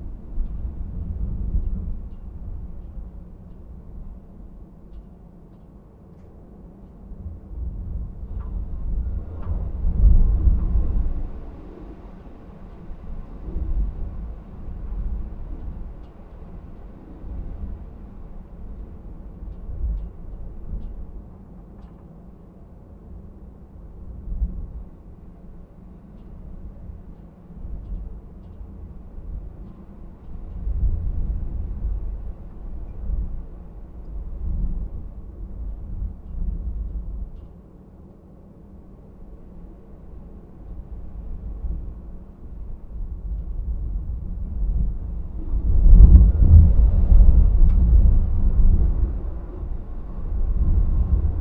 Trégastel, France - Wind From Inside a chimney vent
Des vent violent entendus depuis l'intérieur de la cheminée.
Wild wind from inside a chimney vent.
/Oktava mk012 ORTF & SD mixpre & Zoom h4n
4 March 2015, 22:36